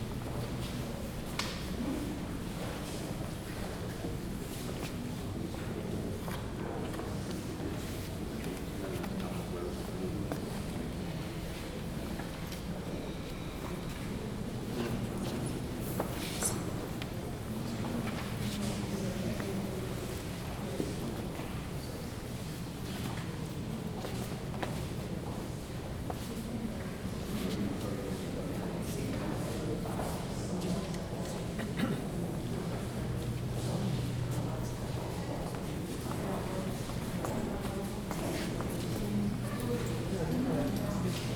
Royal Academy of Arts, Burlington House, Piccadilly, Mayfair, London, UK - Charles I: King and Collector Exhibition, Royal Academy of Arts.
Recorded walking through the Royal Academy Charles I: King and Collector exhibition.
Recorded on a Zoom H2n